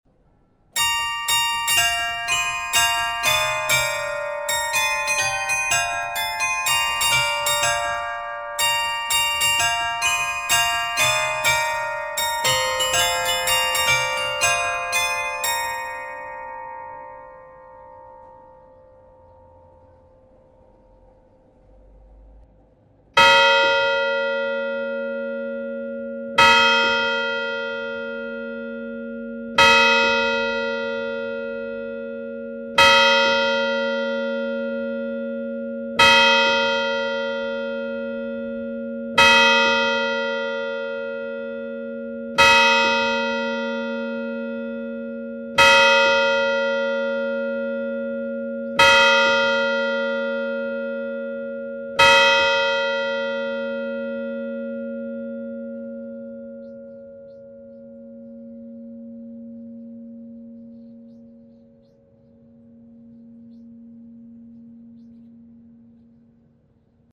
Centre, Ottignies-Louvain-la-Neuve, Belgique - LLN chime
Inside the tower, the chime of LLN ringing La Petite Gayolle, and marking time : 10 hours.